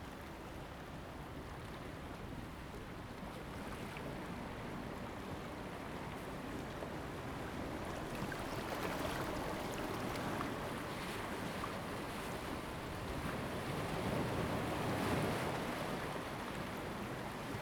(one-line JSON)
{"title": "Jizatay, Ponso no Tao - Small pier", "date": "2014-10-30 09:47:00", "description": "Small pier, Traditional Aboriginal tribal marina, Sound of the waves\nZoom H2n MS +XY", "latitude": "22.03", "longitude": "121.54", "altitude": "6", "timezone": "Asia/Taipei"}